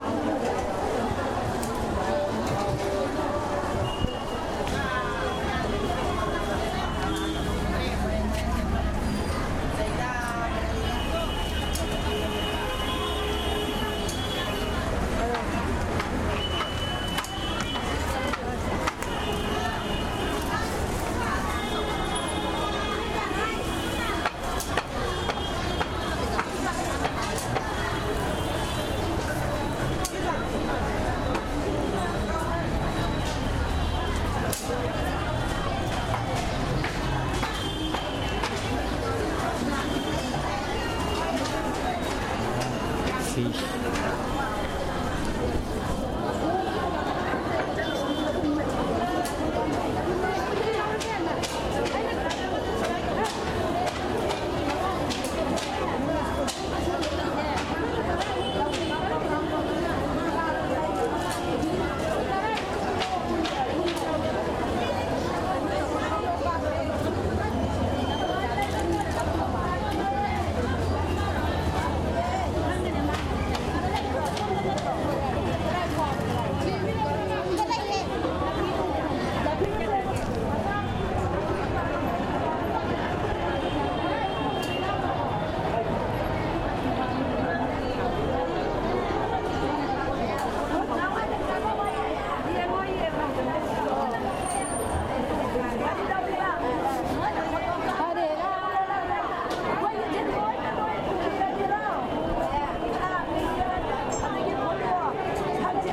Imphal, Manipur, Indien - market
Ima market in Imphal
[Olympus ls1]